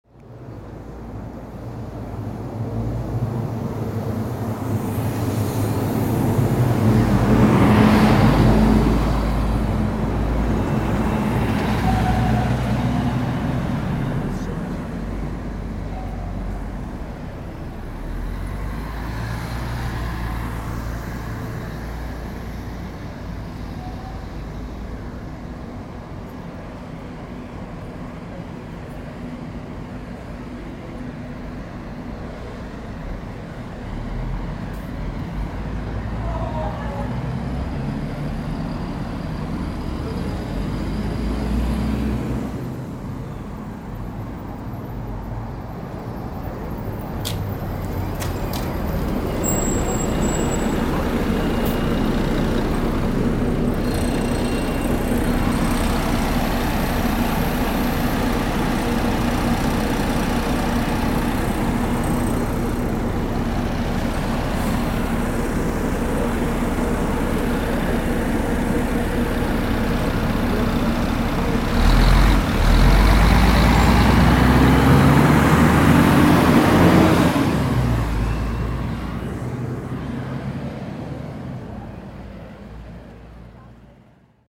St. Gallen (CH), bus traffic
market place, bus station.
recorded june 27th, 2008, around 10 p. m.
project: "hasenbrot - a private sound diary"
Saint Gallen, Switzerland